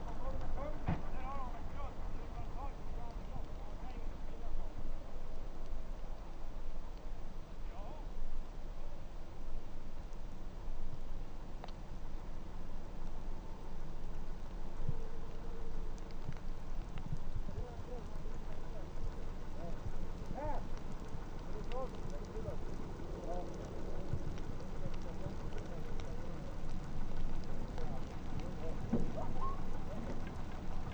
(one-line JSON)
{"title": "University of Tromsø Antenna Field Station at Adventdalen, Svalbard - Adventdalen, Svalbard", "date": "2011-03-26 15:00:00", "description": "Yagis antenna array near the 3rd valley, dogsled huskies crying, snowplow, rain on snow.", "latitude": "78.17", "longitude": "15.99", "altitude": "42", "timezone": "Arctic/Longyearbyen"}